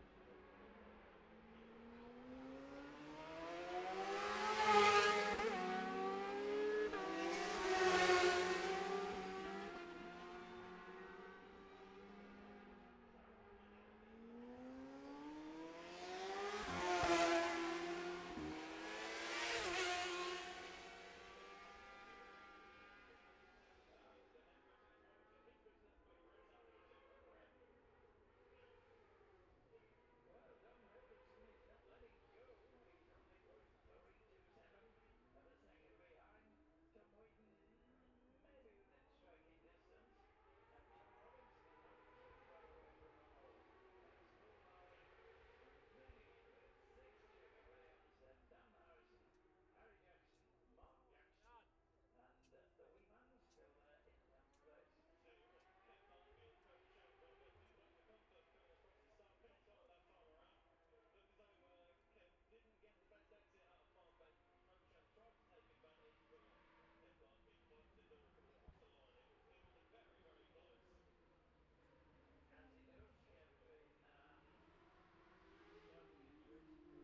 bob smith spring cup ... `600cc heat 2 race ... dpa 4060s to MixPre3 ...
Scarborough, UK